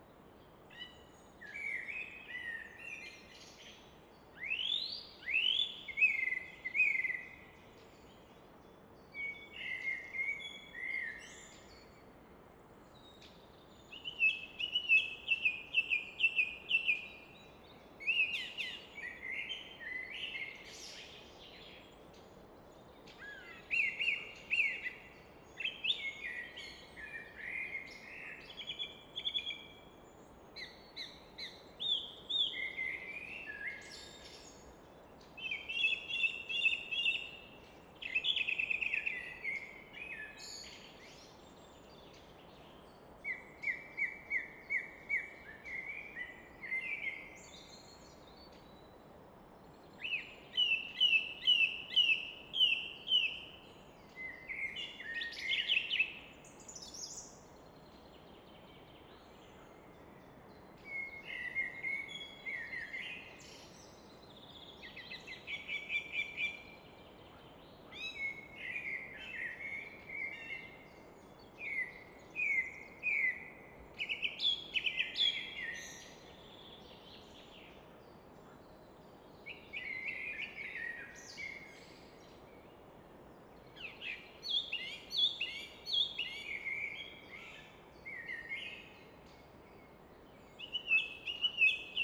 Fläsch, Schweiz - Abendstimmung Nachtigall
Im Hintergrund wird das Rauschen des Rheins von einer Felswand reflektiert.
Vilters-Wangs, Switzerland